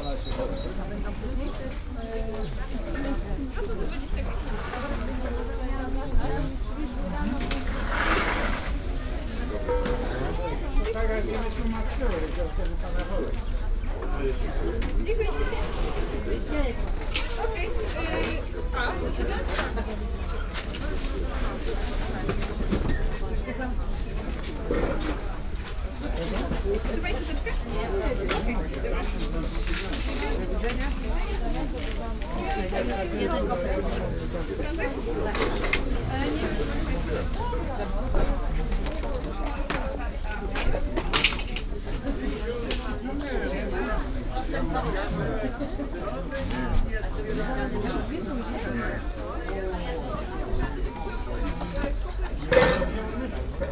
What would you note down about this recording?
(binaural) walking around stalls. lots of customers shopping on a local market on Saturday morning. vendors touting their goods, joking with customers. you are hearing a lot of people talking with the influence of Poznan dialect. It's especially strong among the elderly. Very distinctive dialect and can be heard basically only in Poznan.